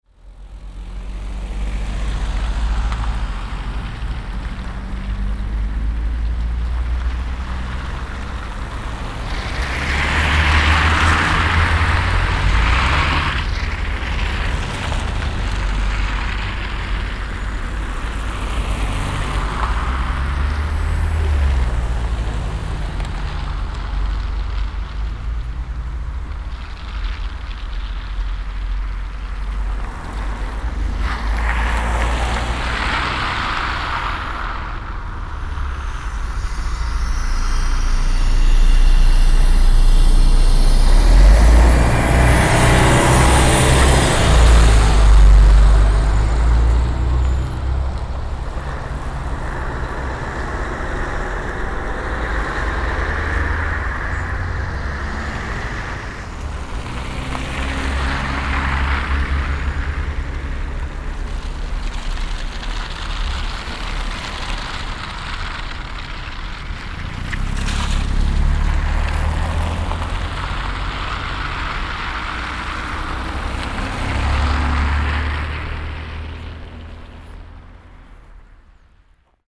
Storgaten/Östra Kyrkogatan, Umeå. Winter tyres.
Winter tyres. At first kidding then the spikes sticking to the road as cars pass by.
Umeå, Sweden, 10 February